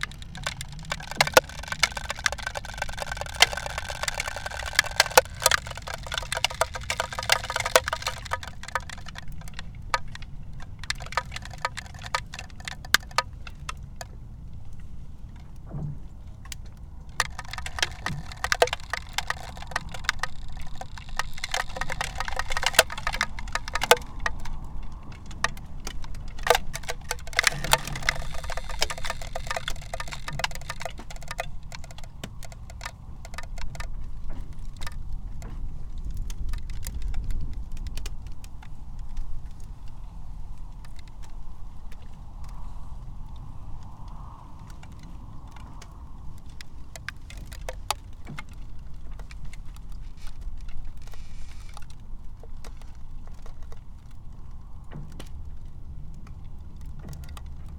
Midwinter lake ice activity - Mid winter lake ice activity
Chuncheon lake ice at Lunar New Year 2018
Chuncheon, Gangwon-do, South Korea, 16 February 2018, 15:00